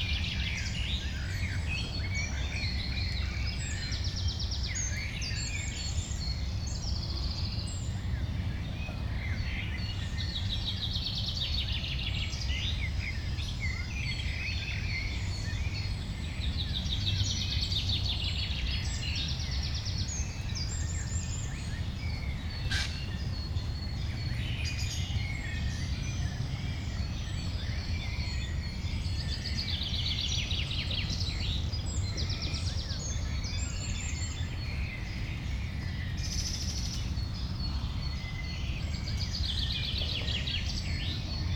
Nijlen, Nijlen, België - early morning